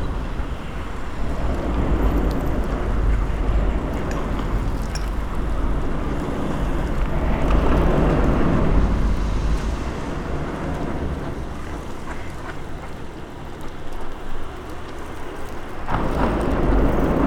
Binckhorstlaan, Den Haag - Carbridge & Birds
The water was partly frozen and several kinds of birds including ducks, swans and coots where swimming and walking around. Also you can hear the cars driving over the bridge.
Recorded using a Senheiser ME66, Edirol R-44 and Rycote suspension & windshield kit.
Laak, The Netherlands